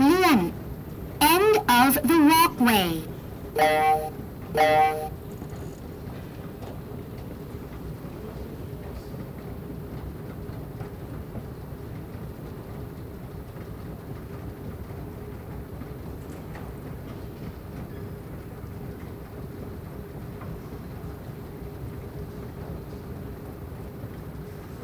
{"title": "Bangkok Airport - End of the walkway (announce at Bangkok Airport)", "date": "2018-09-26 11:00:00", "description": "An automatic voice announce the end of the walkway. Recorded by a Smart Headset AMBEO Sennheiser very cloe to the speaker (close to the ground).", "latitude": "13.69", "longitude": "100.75", "altitude": "1", "timezone": "GMT+1"}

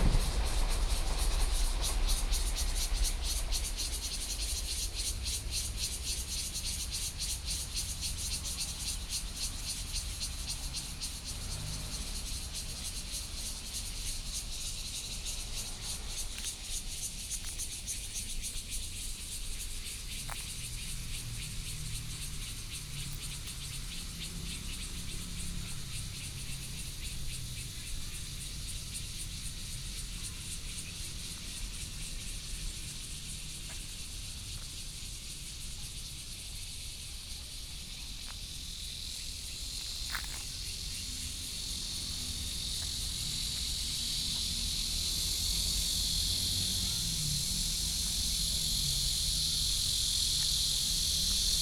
{"title": "楊梅市富岡里, Taoyuan County - Abandoned factory", "date": "2014-08-06 17:36:00", "description": "in theAbandoned factory, Birdsong sound, Cicadas sound, Traffic Sound, Far from the Trains traveling through", "latitude": "24.93", "longitude": "121.08", "altitude": "116", "timezone": "Asia/Taipei"}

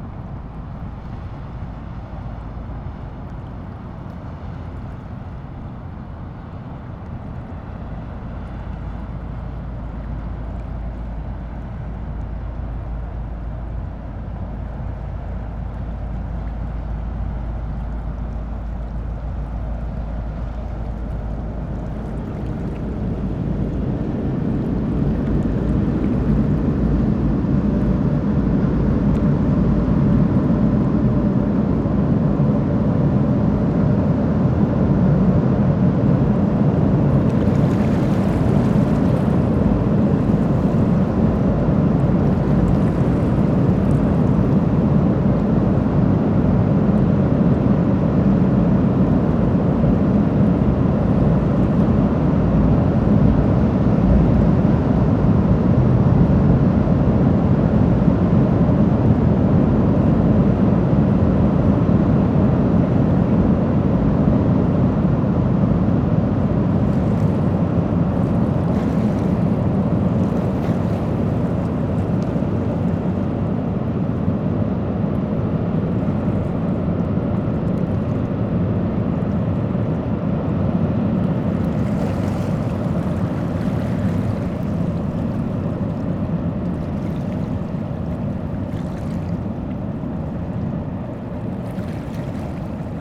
{"title": "Südbrücke, Rheinufer, Köln - ships and train", "date": "2012-09-26 19:10:00", "description": "near Südbrücke (train bridge), river Rhein, ships passing and a train.\n(Sony PCM D-50)", "latitude": "50.92", "longitude": "6.97", "altitude": "37", "timezone": "Europe/Berlin"}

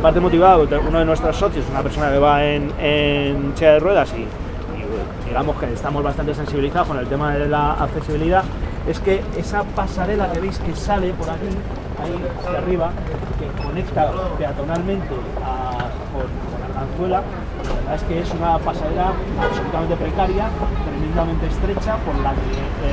{"title": "Pacífico, Madrid, Madrid, Spain - Pacífico Puente Abierto - Transecto - 06 - Puente de Pacífico con Dr. Esquerdo", "date": "2016-04-07 19:35:00", "description": "Pacífico Puente Abierto - Transecto - Puente de Pacífico con Dr. Esquerdo", "latitude": "40.40", "longitude": "-3.67", "altitude": "617", "timezone": "Europe/Madrid"}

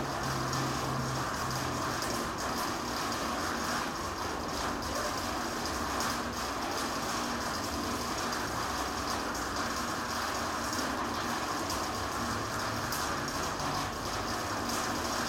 {"title": "San Jacinto de Buena Fe, Ecuador - Buena Fe and the rain.", "date": "2016-03-02 08:00:00", "description": "It rained all night, by dawn it went calm but not as much as I wanted to. Still I recorded the rain hitting the metalic roof.", "latitude": "-0.89", "longitude": "-79.49", "altitude": "104", "timezone": "America/Guayaquil"}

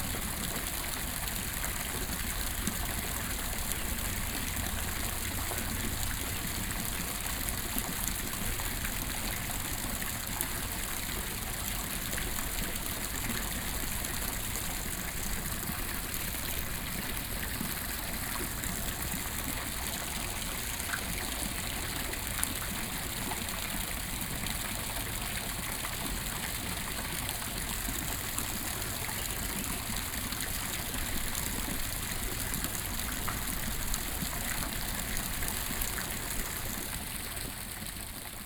{"title": "112台灣台北市北投區一德里 - water", "date": "2012-11-08 07:12:00", "latitude": "25.14", "longitude": "121.48", "altitude": "26", "timezone": "Asia/Taipei"}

